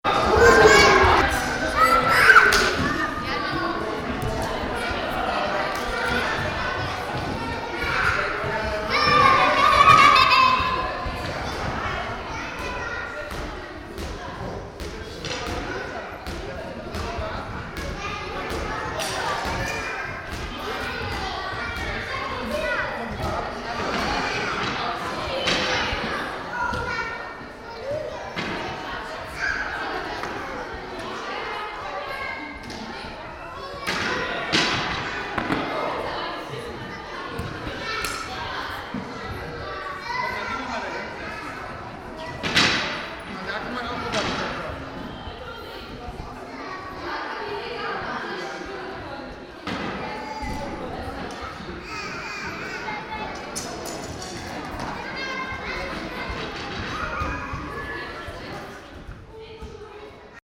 cologne, mainzer str, school ground, gymhall
in the afternoon, open gym for kids
soundmap nrw: social ambiences/ listen to the people in & outdoor topographic field recordings
mainzer strasse. school ground, 4 August 2009, ~15:00